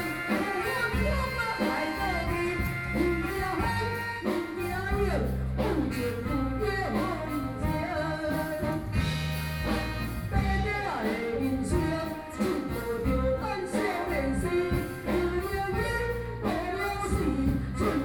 Taoyuan Village, Beitou - Taiwanese opera
Taiwanese Opera, Zoom H4n + Soundman OKM II